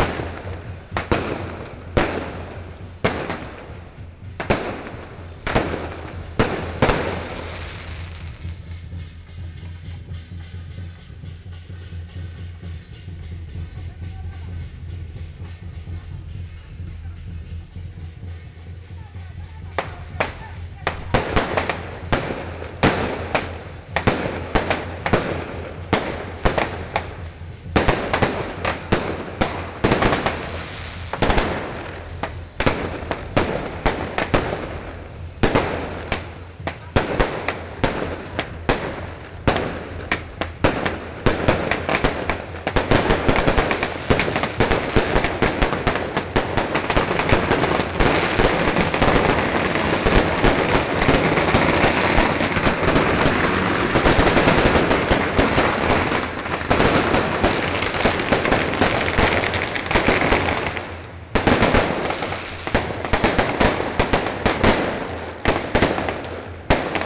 Traditional temple festivals, Firework, Binaural recordings, Sony PCM D50 + Soundman OKM II
20 October 2013, 18:41